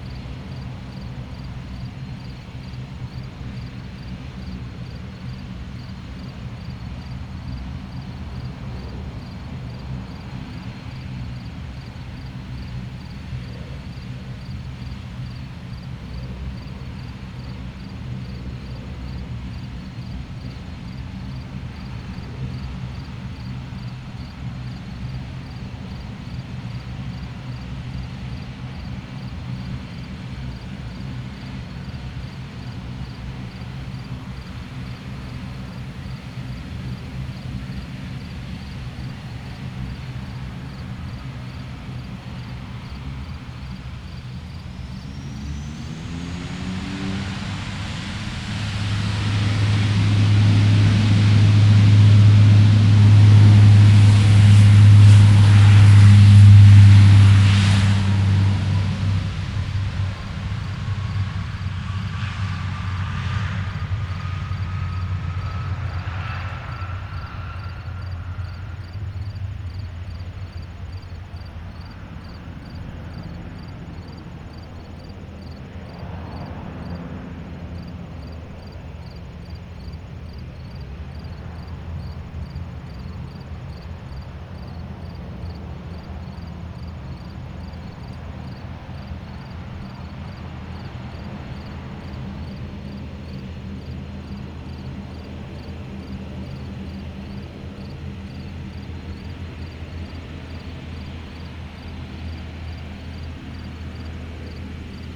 Lakeshore Ave, Toronto, ON, Canada - Two planes
Starts with crickets; later two DeHavilland Dash 8 aircraft take off in rapid succession.
Ontario, Canada